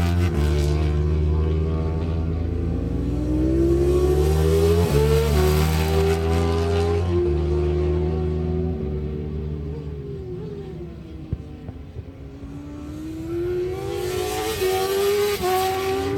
Lillingstone Dayrell with Luffield Abbey, UK - MCN Superbikes Qualifying 1999 ...
MCN Superbikes Qualifying ... Abbey ... Silverstone ... one point stereo mic to minidisk ... warm sunny day ...
19 June 1999, 14:30